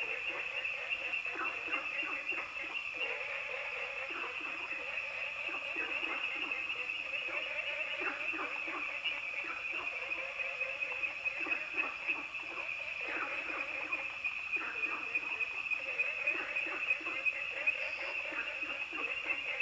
蓮花池藥用植物標本園, 五城村 Yuchih Township - Dogs barking and Frogs chirping
Dogs barking, Frogs chirping
Zoom H2n MS+ XY
Yuchi Township, 華龍巷43號, 3 May